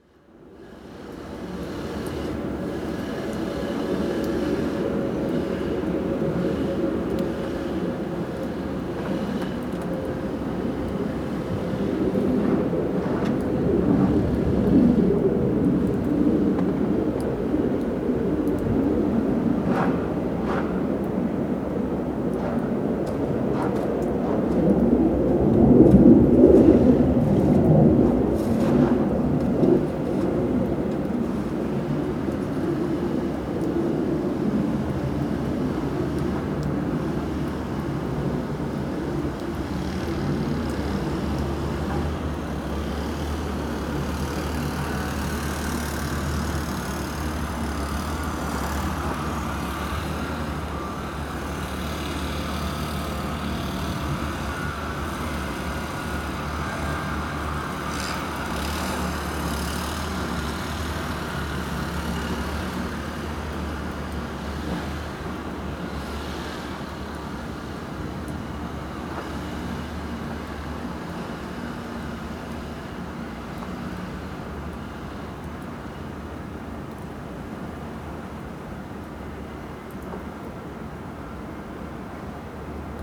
snowblowers, shovels, passenger plane on approach, Mt. Prospect, Illinois, Chicago, snow